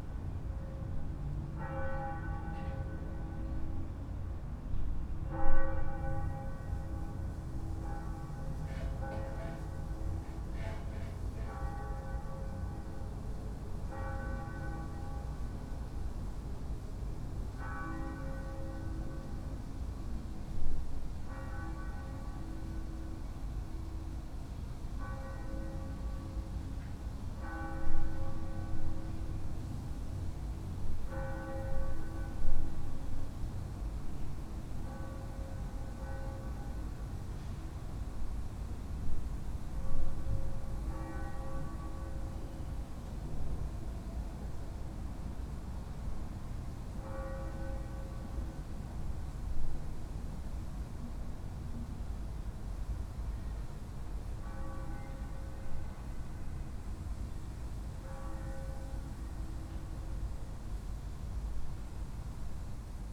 Berlin Bürknerstr., backyard window - easter bells
easter bells heard in my backyard